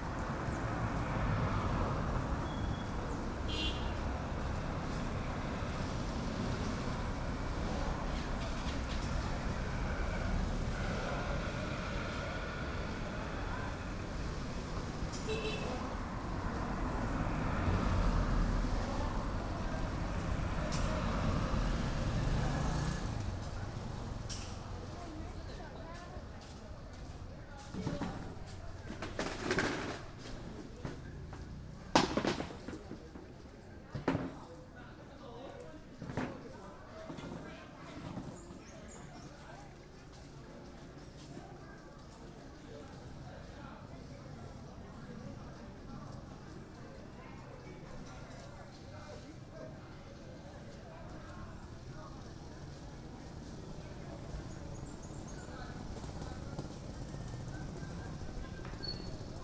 Baisha, Hainan, China - Guanyua junction on a Saturday morning
Guanyua junction on a Saturday morning. Busses criss-crossing the county–and island–interchange on the side of the street here. Recorded on a Sony PCM-M10 with build-in microphones.